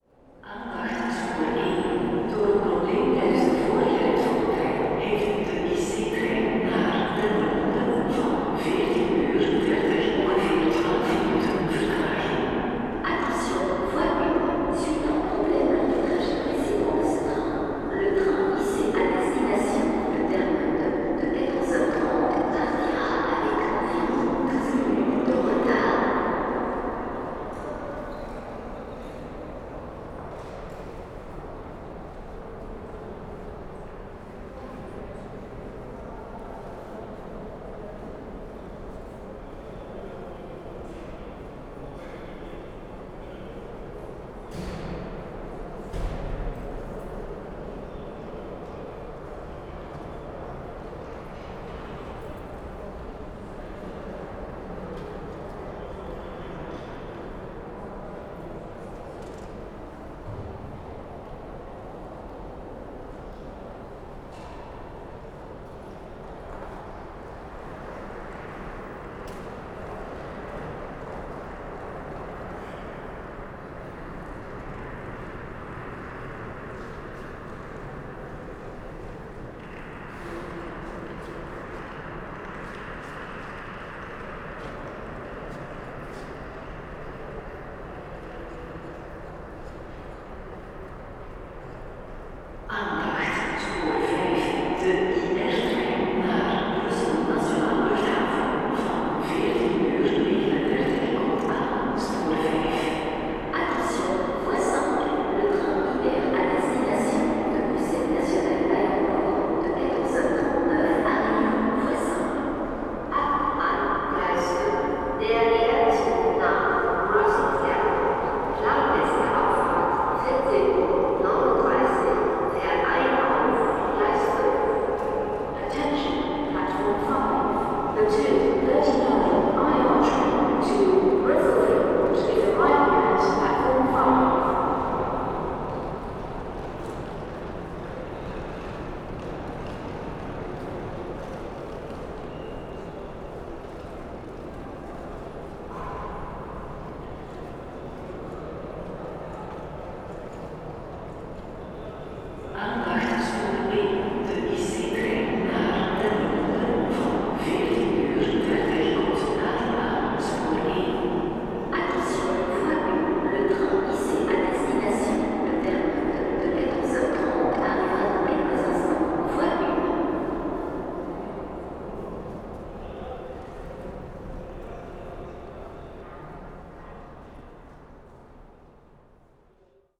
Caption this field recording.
the announcements in the great hall of Gare du Nord are difcult to understand because of the heavy echoing space. (Sony PCM D50, DPA4060)